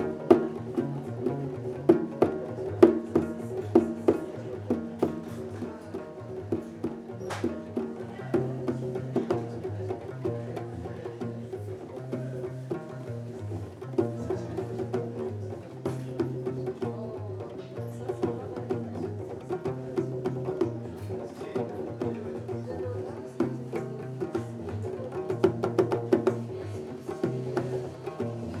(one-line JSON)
{"title": "Rahba Kedima, Marrakech, Marokko - Gnawa", "date": "2014-02-27 22:40:00", "description": "Gnawa improvisation during a 12h radio peformance at cafe des Epices, Marrakesh\n(Olympus LS5)", "latitude": "31.63", "longitude": "-7.99", "timezone": "Africa/Casablanca"}